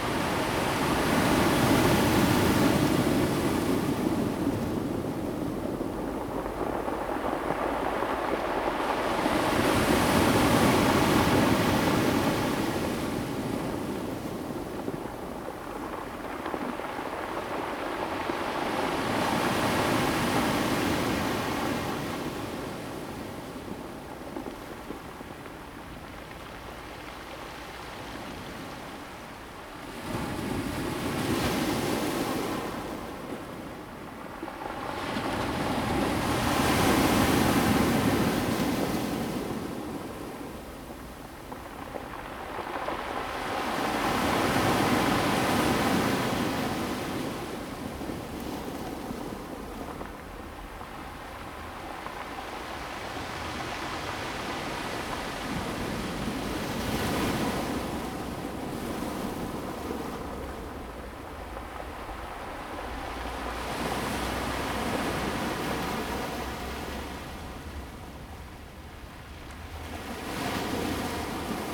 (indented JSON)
{
  "title": "北濱公園, Hualien City - sound of the waves",
  "date": "2016-12-14 16:16:00",
  "description": "sound of the waves\nZoom H2n MS+XY +Sptial Audio",
  "latitude": "23.98",
  "longitude": "121.62",
  "altitude": "9",
  "timezone": "GMT+1"
}